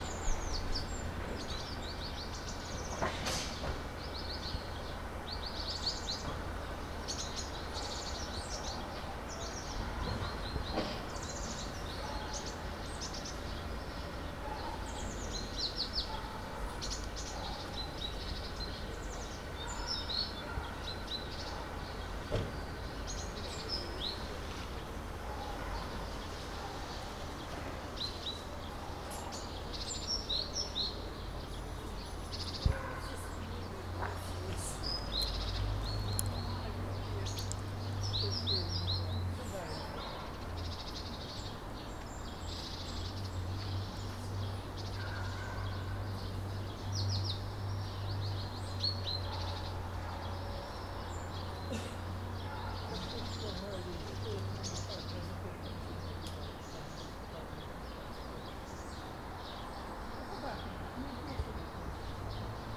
вулиця Трудова, Костянтинівка, Донецька область, Украина - Константиновка просыпается
Звуки птиц, прохожие и звуки машин
Kostiantynivka, Donetska oblast, Ukraine, 11 October 2018, 08:08